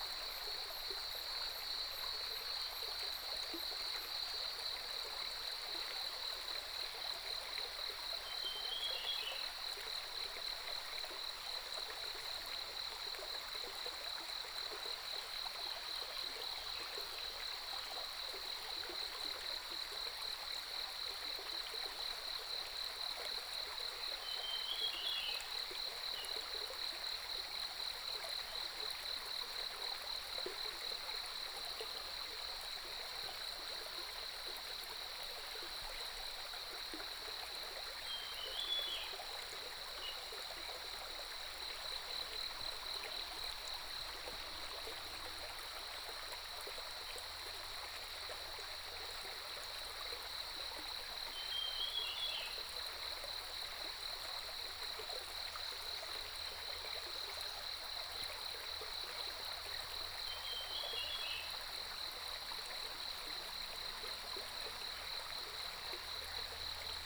中路坑溪, 埔里鎮桃米里 - Streams and birdsong
Streams and birdsong, The sound of water streams, Cicadas cry
Puli Township, Nantou County, Taiwan, 12 June